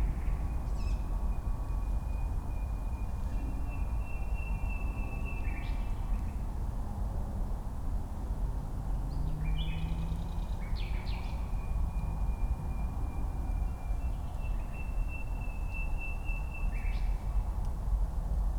Schloßpark Buch, Berlin, Deutschland - park ambience /w Nightingale and distant traffic noise
Schloßpark Berlin Buch ambience, nightingale, young tawny owls and another nightingale in the background, as well as traffic noise from cars, suburb and freight trains.
(Sony PCM D50, DPA4060)